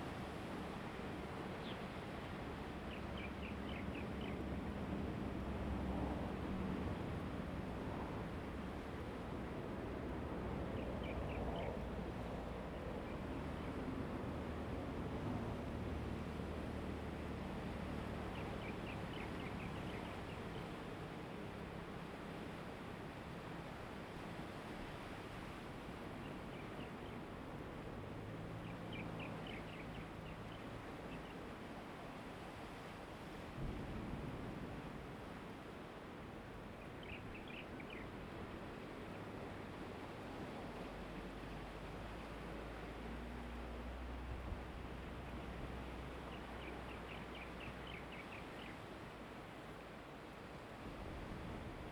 東興, 新社村, Fengbin Township - the waves
Small towns, Traffic Sound, Sound of the waves, Very Hot weather
Zoom H2n MS+XY
Hualien County, Fengbin Township, 東興, 28 August 2014